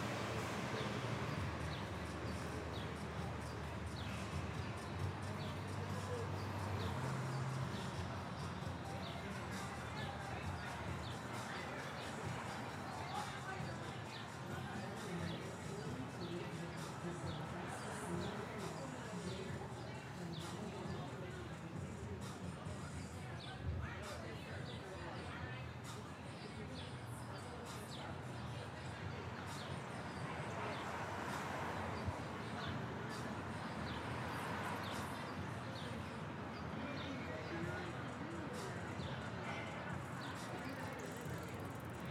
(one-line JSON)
{"title": "Ave, Ridgewood, NY, USA - 71st Avenue Plaza", "date": "2022-03-05 01:11:00", "description": "Sunday afternoon at 71st Avenue Plaza, Ridgewood.", "latitude": "40.70", "longitude": "-73.90", "altitude": "28", "timezone": "America/New_York"}